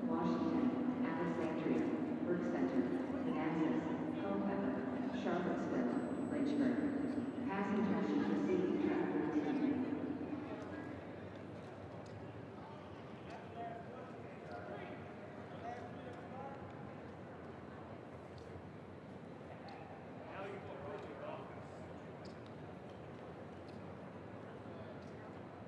W 33rd St, New York, NY, United States - At Moynihan Train Hall
At Moynihan Train Hall. Sounds of passengers rushing to the Amtrak train.